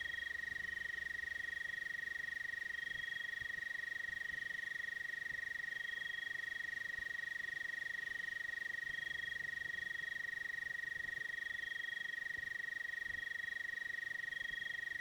Trail near Interpretive Center, Lost Bridge West State Recreation Area, Andrews, IN, USA - Insects at night, near Interpretive Center, Lost Bridge West State Recreation Area
Sounds heard on an evening hike, Lost Bridge West State Recreation Area, Andrews, IN, USA. Part of an Indiana Arts in the Parks Soundscape workshop sponsored by the Indiana Arts Commission and the Indiana Department of Natural Resources.